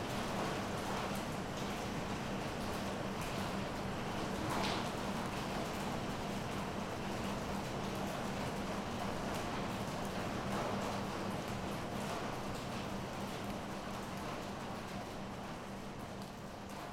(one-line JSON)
{"title": "Rijeka, Croatia, Palach - Introduction to Sound Design - Acoustic Communication", "date": "2014-01-14 19:30:00", "latitude": "45.33", "longitude": "14.44", "timezone": "Europe/Zagreb"}